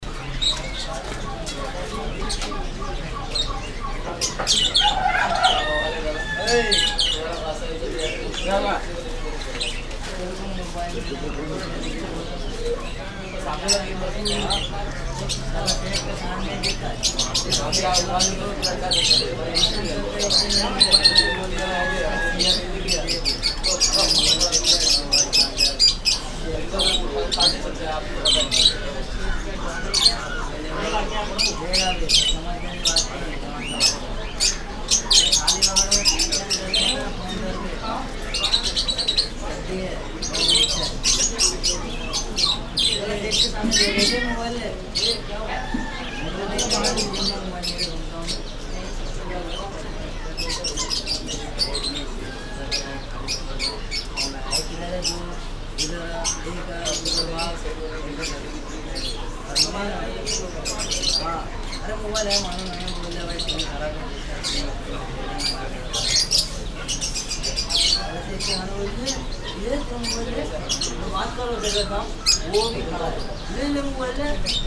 India, Mumbai, jyotiba Phule Market, Crawford pets market, birds